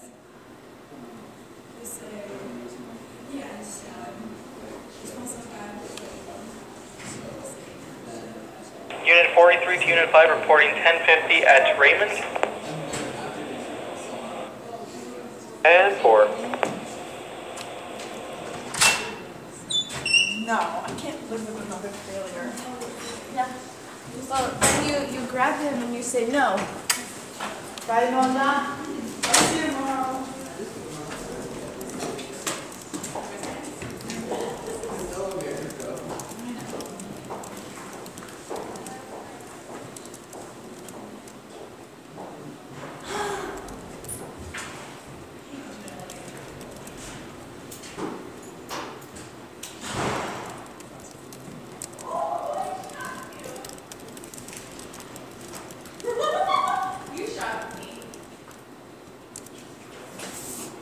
Vassar College, Raymond Avenue, Poughkeepsie, NY, USA - Night Watch
This is a soundscape of the Jewitt House lobby during a patrol shift. This recording demonstrates typical anthrophony for the specified time and location, featuring socializing students, well-used keyboards, patrol radio transmissions, and piano music from a nearby parlor.